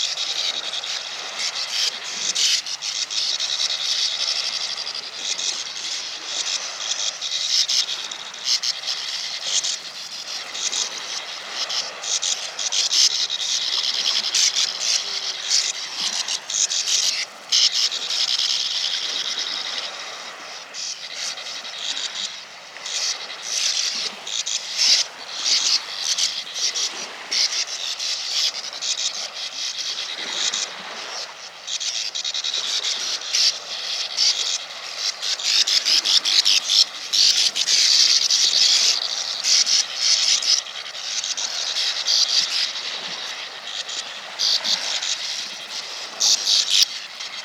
Baltic Sea, Nordstrand Dranske, Rügen - Bank swallows full on
A swallows breeding colony in the sandy cliffs at the wild northern beach
Olympus LS11, AB_50 stereo setup with a pair of pluginpowered PUI-5024 diy mics